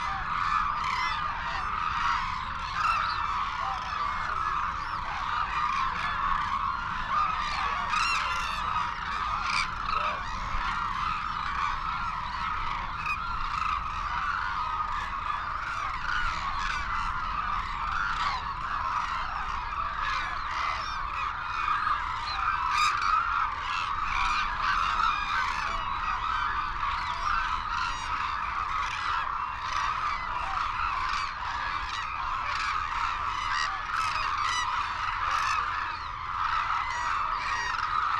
Sho, Izumi, Kagoshima Prefecture, Japan - Crane soundscape ...
Arasaki Crane Centre ... Izumi ... calls and flight calls from white naped cranes and hooded cranes ... cold windy sunny ... Telinga ProDAT 5 to Sony minidisk ... background noise ... wheezing whistles from young birds ...
Izumi-shi, Kagoshima-ken, Japan